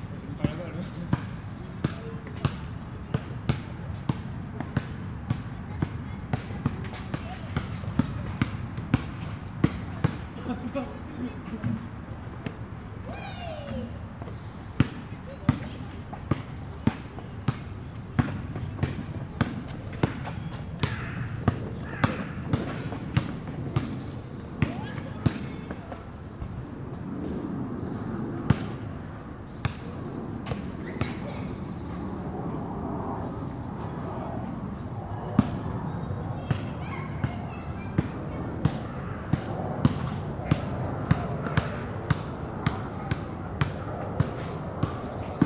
Rue des Amidonniers, Toulouse, France - Two guys play basketball
park, children playing, birds singing, crows
Two guys play basketball
Captation : Zoom H4N